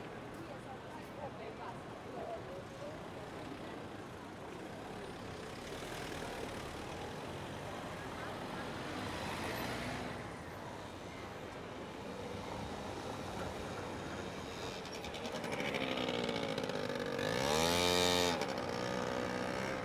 Saint-Gilles, Belgium - St Gilles
A lot of traffic passing over cobble-stone paving. I documented the nice sonic textures with Audio Technica BP4029 on wide stereo setting with FOSTEX FR-2LE. Nice.